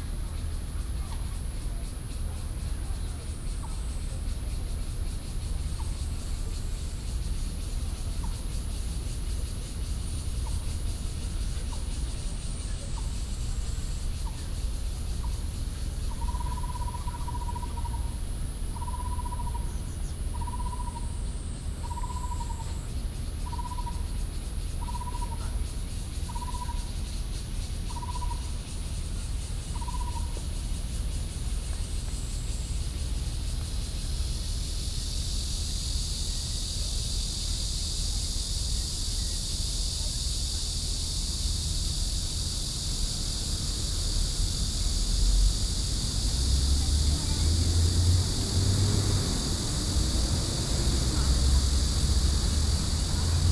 Zhoushan Rd., Da'an Dist. - Cicadas cry
Cicadas cry, Traffic Sound, Visitor, In the university entrance
28 June 2015, 17:04